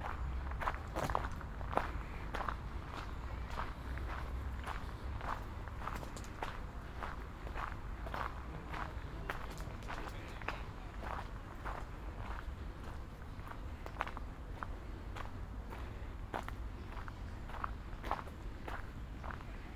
Ascolto il tuo cuore, città. I listen to your heart, city, CHapter LXXII - Phase II Sunday Coffee at Valentino park in the time of COVID19 soundwalk
"Phase II Sunday Coffee at Valentino park in the time of COVID19" soundwalk
Chapter LXXII of Ascolto il tuo cuore, città. I listen to your heart, city
Sunday May 10th 2020. First Sunday of Phase II, coffee at the Valentino Park kiosk, sixty one (but seventh day of Phase II) of emergency disposition due to the epidemic of COVID19
Start at 2:05 p.m. end at 3:04 p.m. duration of recording 58’55”
The entire path is associated with a synchronized GPS track recorded in the file downloadable here: